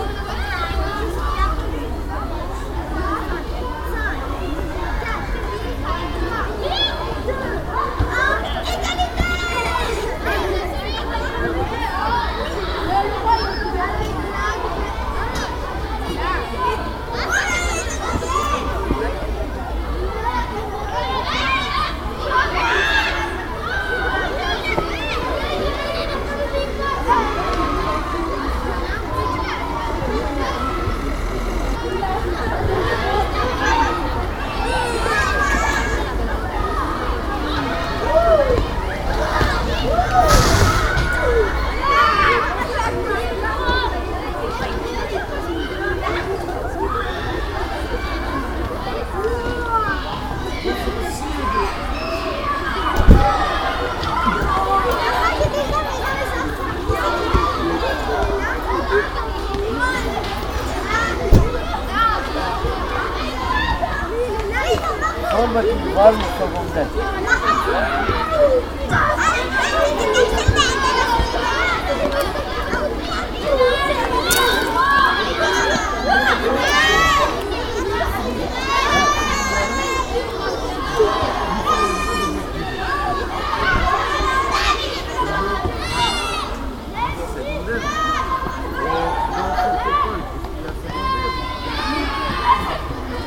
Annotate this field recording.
Sounds of the Neufbois school, where children are playing.